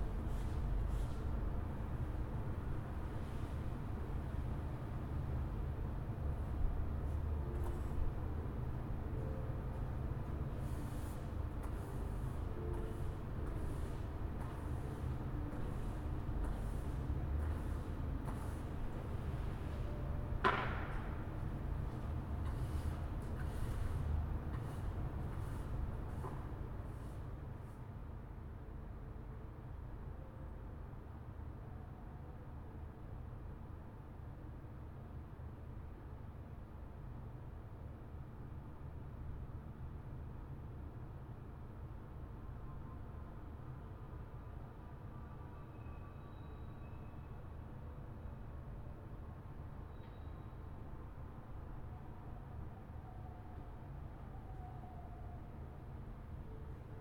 Vabaduse väljak, Tallinn, Estonia - Cleaning of carpets
Workers cleaning carpets in courtyard, someone is practicing piano, tram sound from far away